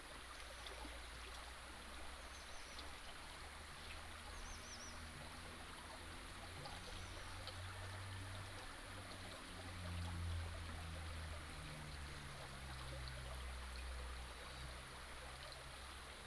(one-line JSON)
{"title": "Zhonggua Rd., Puli Township - In a small stream", "date": "2016-04-20 14:42:00", "description": "In a small stream", "latitude": "23.96", "longitude": "120.89", "altitude": "454", "timezone": "Asia/Taipei"}